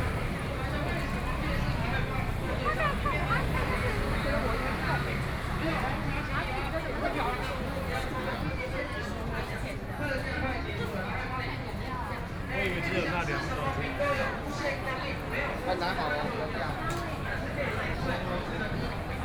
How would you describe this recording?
walking on the Road, Many tourists, Various shops voices, Please turn up the volume a little. Binaural recordings, Sony PCM D100+ Soundman OKM II